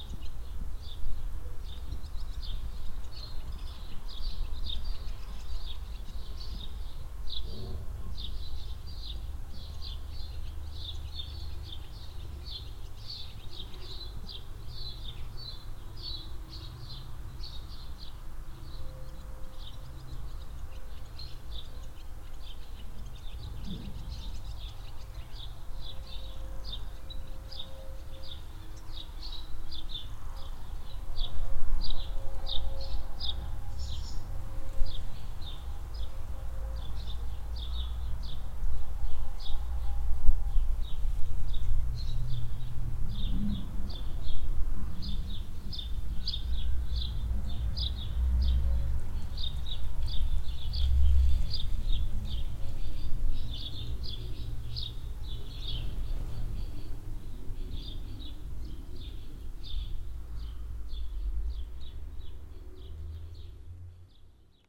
hoscheid, barn yard, swallows
At a barn yard in the centre of the village. Swallows flying under the roof of the shed. Mellow wind. Recorded in the early afternoon in spring time.
Hoscheid, Scheune, Schwalben
In einer Scheune im Ortszentrum. Schwalben fliegen unter das Dach der Hütte. Sanfter Wind. Aufgenommen am frühen Nachmittag im Frühling.
Hoscheid, basse-cour, hirondelles
Dans une basse-cour au centre du village. Des hirondelles volent sous le toit de l’étable. Un vent doux. Enregistré au printemps, en début d’après-midi.
Projekt - Klangraum Our - topographic field recordings, sound art objects and social ambiences
2 June 2011, 16:40